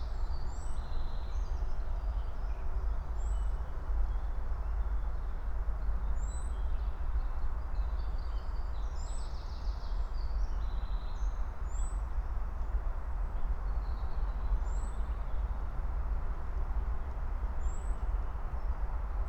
Berlin, Buch, Am Sandhaus - forest edge, former Stasi hospital, birds, Autobahn drone
morning birds /w traffic drone
(remote microphone: AOM5024/ IQAudio/ RasPi Zero/ LTE modem)
Deutschland, 4 March, 06:30